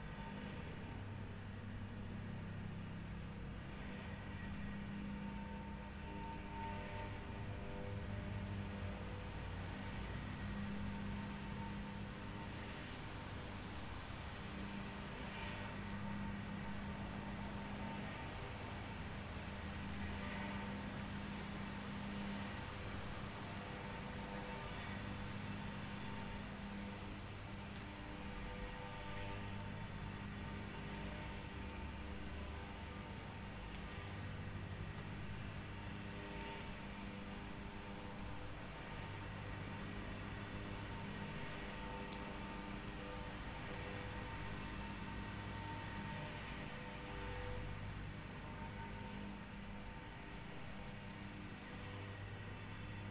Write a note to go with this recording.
small roadside park in Berlin, drizzling rain, "h2 handyrecorder"